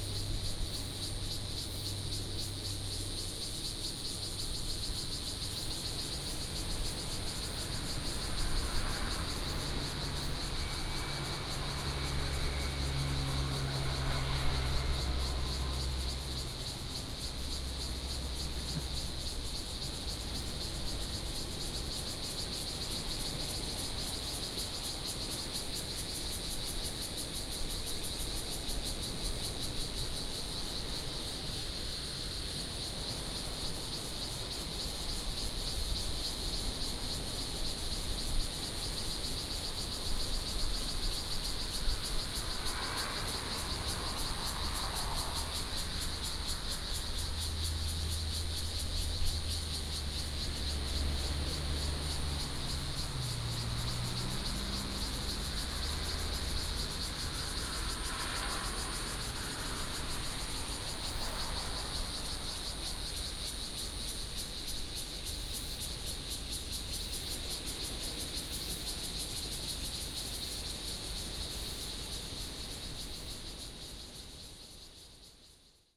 金樽, Donghe Township - On the coast
Cicadas sound, Traffic Sound, Sound of the waves, Tourists Recreation Area, The weather is very hot
Donghe Township, 花東海岸公路113號, September 2014